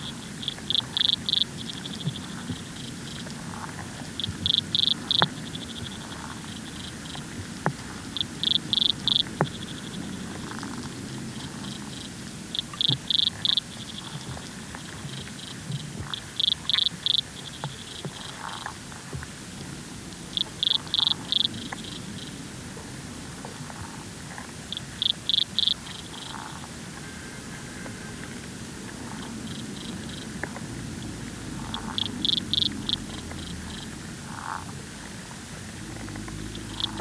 Under Cleveden Road Bridge, Wyndford, Glasgow, UK - The Forth & Clyde Canal 003: Corixidae (water boatmen)
Recorded with an Aquarian Audio H2a hydrophone and a Sound Devices MixPre-3